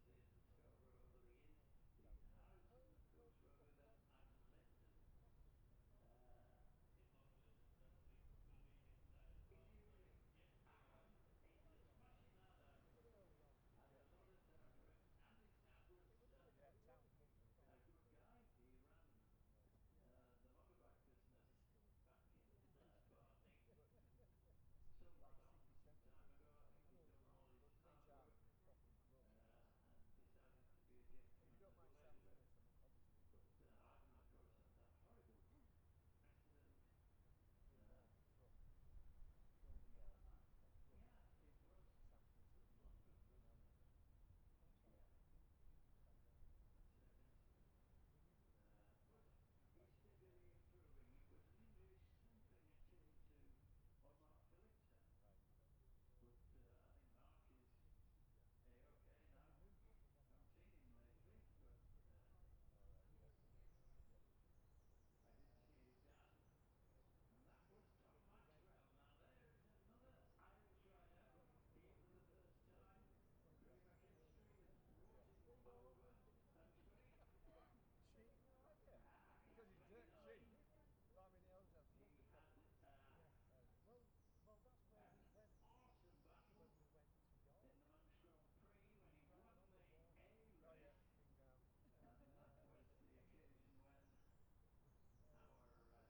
Jacksons Ln, Scarborough, UK - olivers mount road racing 2021 ...
bob smith spring cup ... olympus LS 14 integral mics ... running in sort of sync with the other recordings ... starts with 600cc group B and continues until twins group B practices ... an extended time edited recording ...
22 May 2021, ~10:00